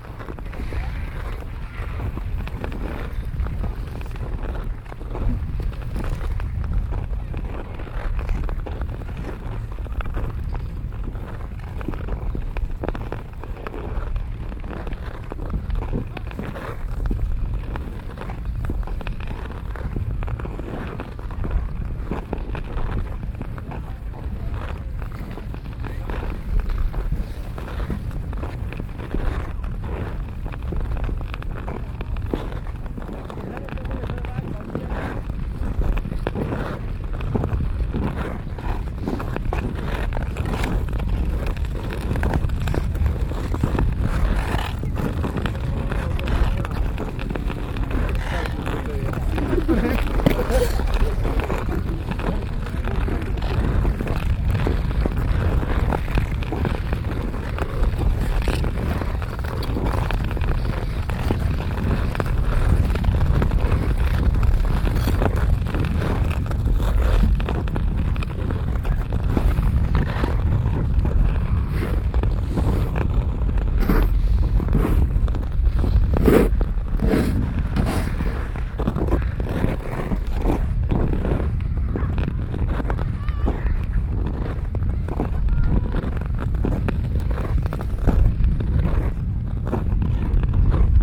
Vodní nádrž Hostivař, iceskate expedition
trip along the botič creek to the frozen dam in Hostivař